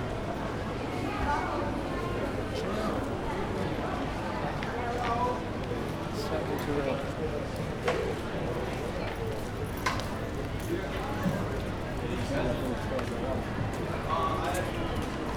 Riomaggiore, La Spezia province - main street
walking along the main street in Riomaggiore. it's bustling with people, restaurants and cafes are full. very lively atmosphere.
5 September 2014, 17:06